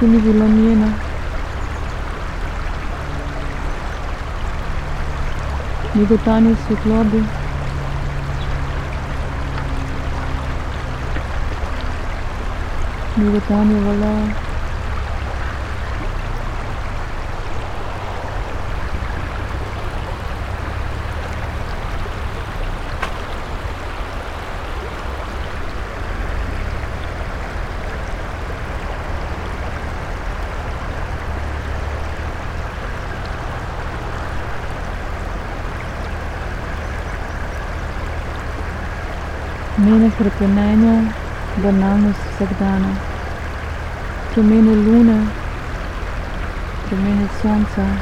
still poem, Drava, Slovenia - flux
Drava river, human voice, excavator on opposite riverbank
Starše, Slovenia, 5 October 2012